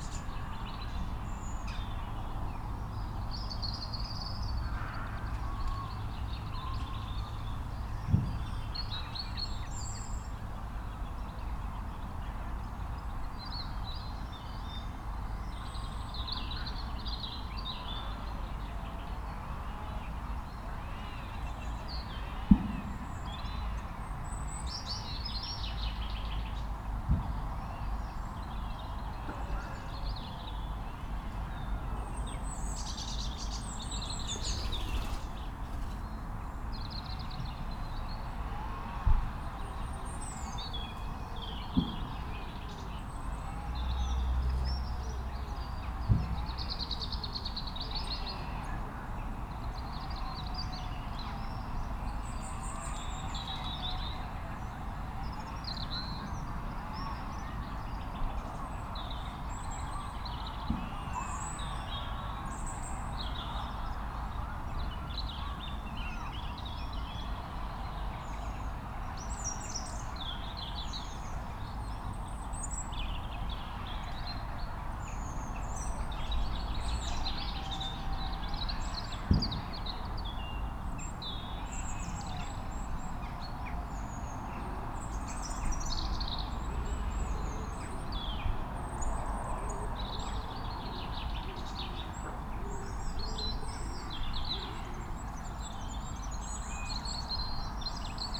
Little Garth, Church St, Kirkbymoorside, York, UK - community garden ... kirkbymoorside ...
community garden ... kirkbymoorside ... lavalier mics clipped to sandwich box ... bird calls ... song from ... goldcrest ... blackbird ... song thrush ... robin ... jackdaw ... crow ... wood pigeon ... collared dove ... dunnock ... coal tit ... great tit ... siskin ... chaffinch ... background noise ...